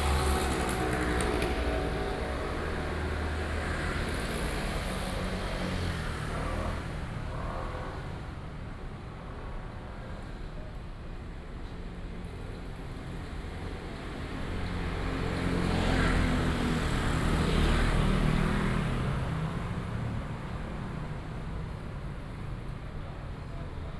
From the main road to the small street, Various shops, traffic sound
New Taipei City, Taiwan, 25 July 2015, ~18:00